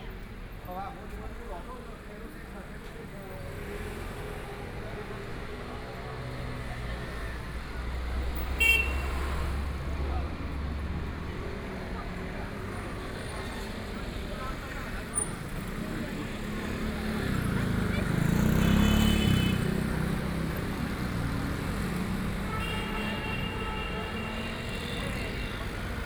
{"title": "South Sichuan Road, Shanghai - Soundwalk", "date": "2013-11-25 15:17:00", "description": "walking in the Street, Walking through the bazaar, The crowd, Bicycle brake sound, Traffic Sound, Binaural recording, Zoom H6+ Soundman OKM II", "latitude": "31.23", "longitude": "121.49", "altitude": "6", "timezone": "Asia/Shanghai"}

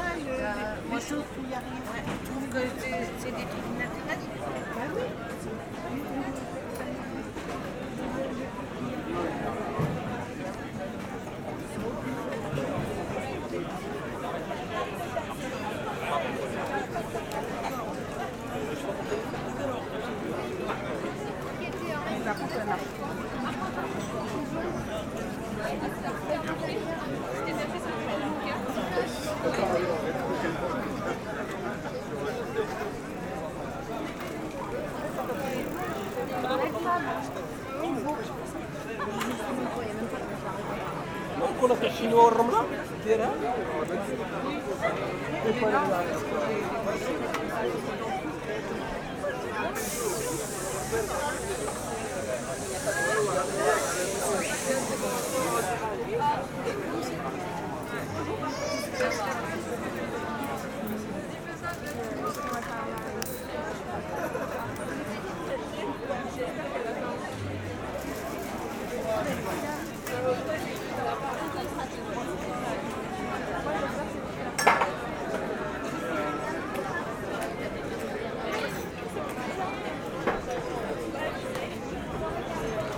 {"title": "Le Mans, France - Public holiday", "date": "2017-08-14 17:45:00", "description": "A public holiday evening, a lot of people are in the bars, drinking and enjoy the sun.", "latitude": "48.00", "longitude": "0.20", "altitude": "68", "timezone": "Europe/Paris"}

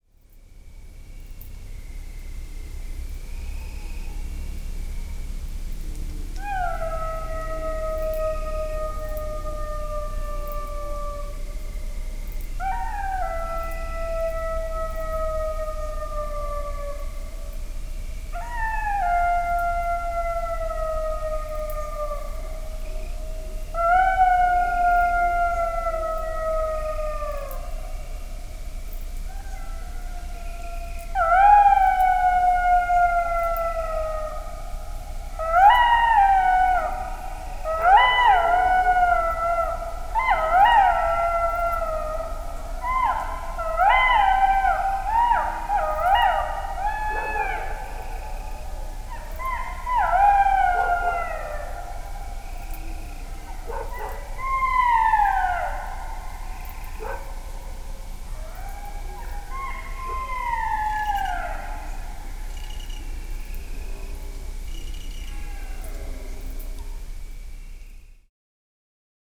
{"title": "Opatje selo - Lokvica, 5291 Miren, Slovenia - Jackal howling", "date": "2020-09-04 03:51:00", "description": "An jackal howling in the middle of the night.", "latitude": "45.85", "longitude": "13.60", "altitude": "199", "timezone": "Europe/Ljubljana"}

